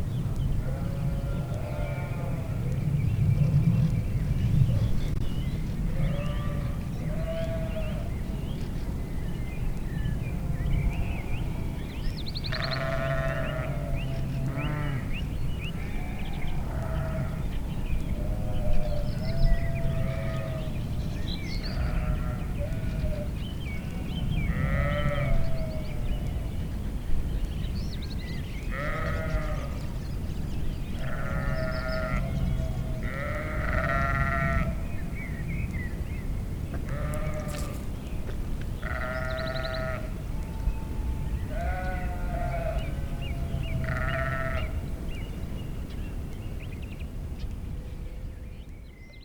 sheeps in cimice valey
sounds of grazing sheeps in the nature reservation in Cimice
May 2011